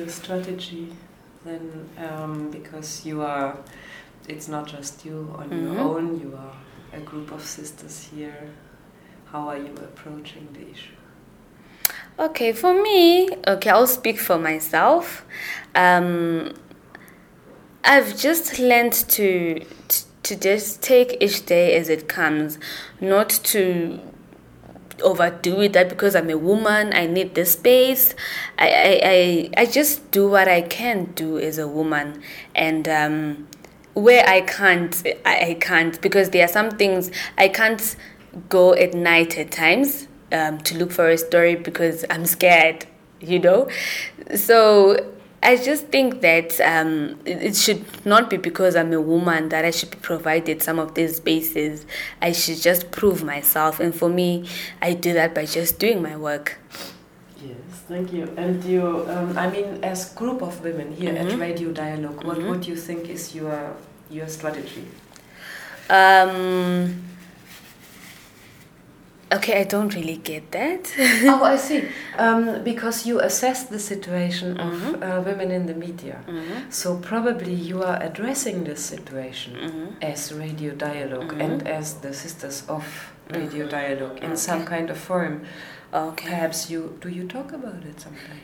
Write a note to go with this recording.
Nothando Mpofu, the station’s community liaison and advocacy officer tells about the challenges of women in the media.